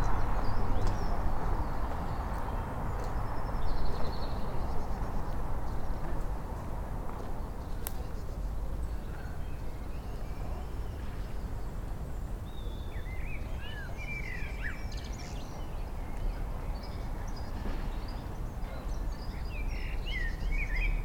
George IV park, Edinburgh, Edinburgh, UK - Blackbird singing to the park
Strolling towards the park with my buddy Louise, I heard the loveliest Blackbird song drifting down from the roof of the building beside the park. There were some nice noticeboards around, explaining the history of the site which we stood and read, while listening to the lovely birdsong.
22 March 2016